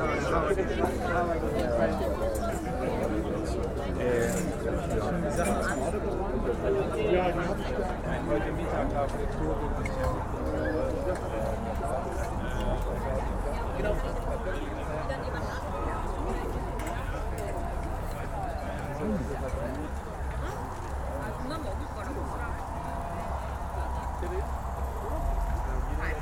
field near Manheim, Germany - climate camp, common dinner time
a team was cooking food in huge pots all evening. people eating, sounds of tin plates and relaxed conversations, at the Klimacamp Manheim.
(Sony PCM D50, DPA4060)